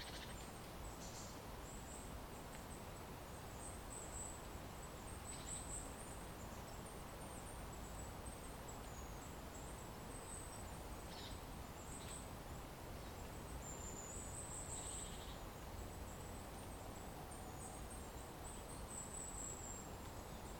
Dzierżążno, Polska - Autumn in the woods
Early autumn in the woods near the place where my family lives. Recorded during an sunday stroll. Recorded with Zoom H2n.
Dzierżążno, Poland, 12 October 2014